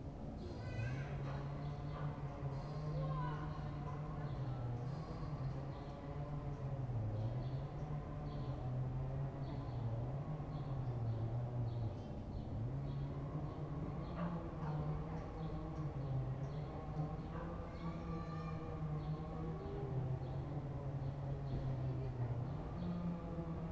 church bells, kids from the nearby playground, wood cutters cutting wood, barking dog
the city, the country & me: august 3, 2011